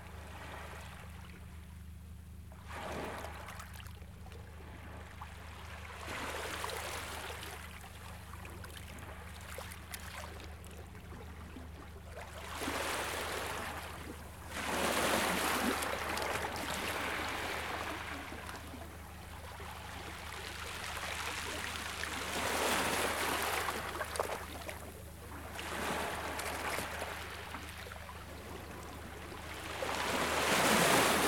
Standing on a rock in the water, at the point where the waves were breaking on the shore, and facing down the beach so that waves approach form the left and fade away from the right.
(zoom H4n internal mics)
The City of Brighton and Hove, UK